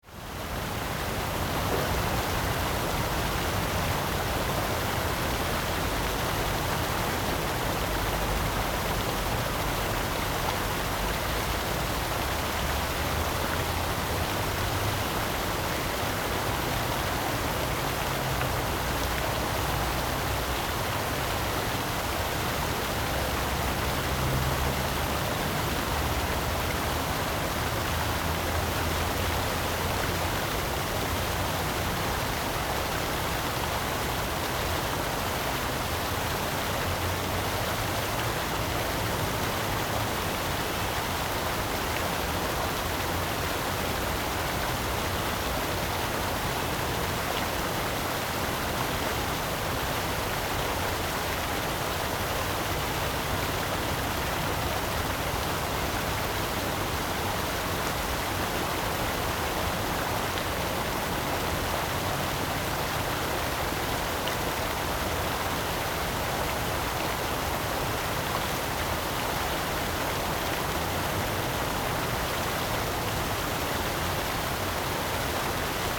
in the Park, on the edge of the lake
Zoom H2n MS+XY
Tainan City, Taiwan, 18 February